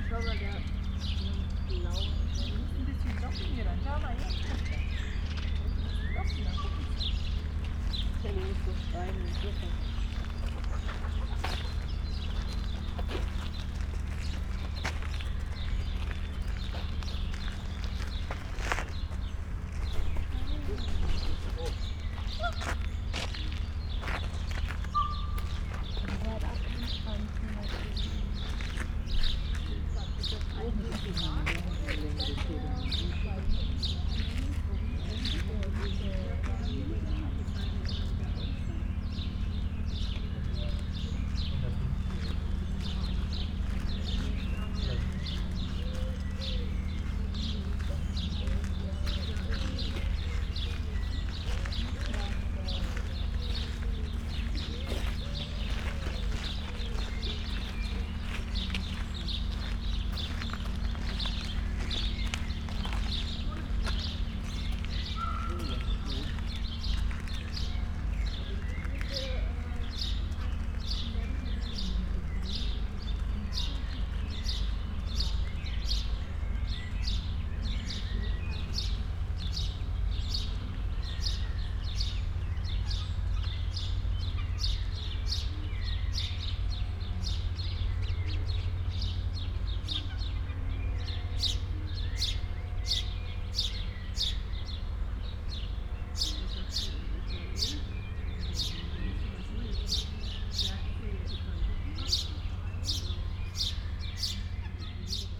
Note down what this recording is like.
sonic scape close to the cage, inside peacocks, chickens, duck, steps around and spoken words, free birds